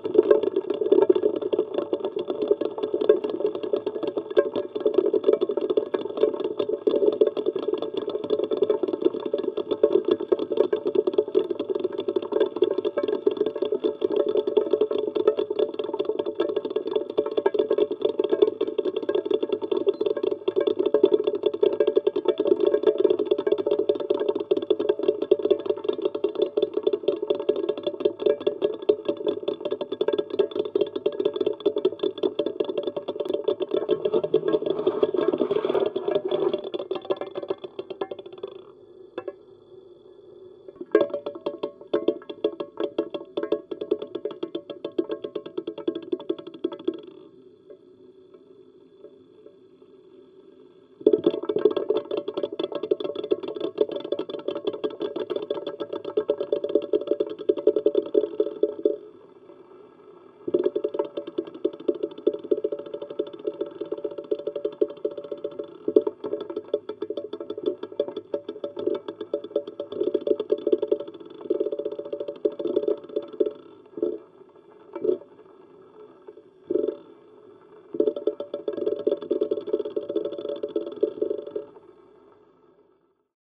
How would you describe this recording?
While I was cooking eggs at home, I had fun when I put a contact microphone on the pan. Dancing eggs beginning at 2:45 mn !